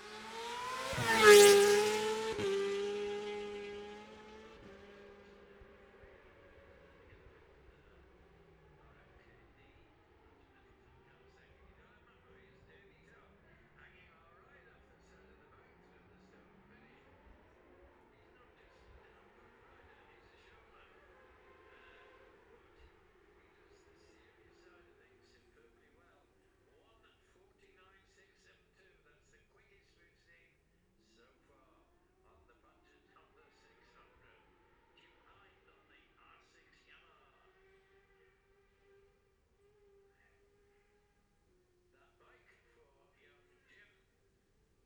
Jacksons Ln, Scarborough, UK - gold cup 2022 ... 600s practice ...
the steve henshaw gold cup 2022 ... 600s practice group one then group two ... dpa 4060s clipped to bag to zoom h5 ...